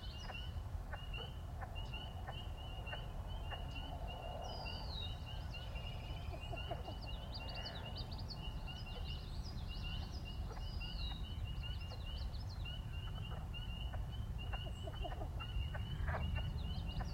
Lower Alloways Creek, NJ, USA - salem river
Dusk recording along closed section of road by a noisy, condemned bridge. Reedy, tidal wetlands.The bridge pops while birds and frogs sing.